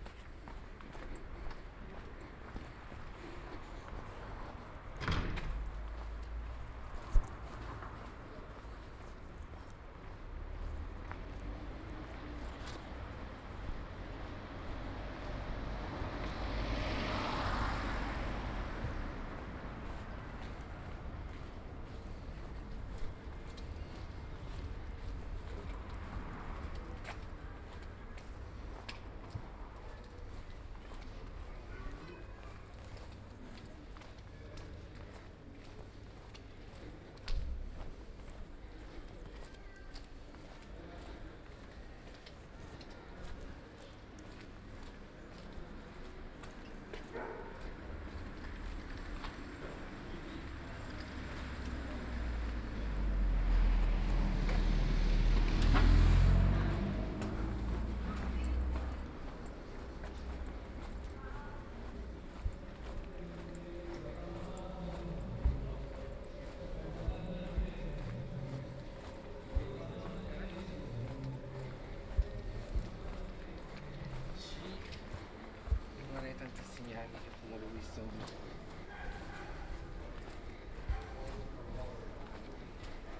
Chapter XLI of Ascolto il tuo cuore, città. I listen to your heart, city
Saturday April 11th 2020. Short walk to Tabaccheria to buy stamp and send a paper mail to France, San Salvario district Turin, thirty two days after emergency disposition due to the epidemic of COVID19.
Start at 5:46 p.m. end at 6:00 p.m. duration of recording 14’08”
The entire path is associated with a synchronized GPS track recorded in the (kmz, kml, gpx) files downloadable here: